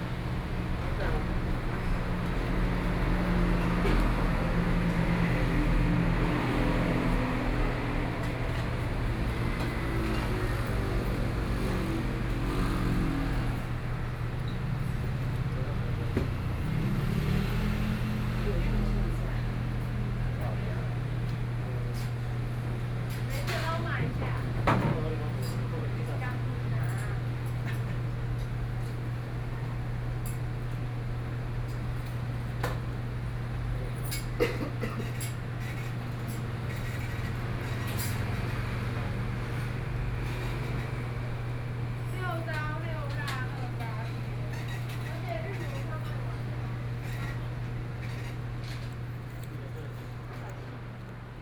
Beitou, Taipei - At the restaurant
At the restaurant entrance, Ordering, Traffic Noise, Binaural recordings, Sony PCM D50 + Soundman OKM II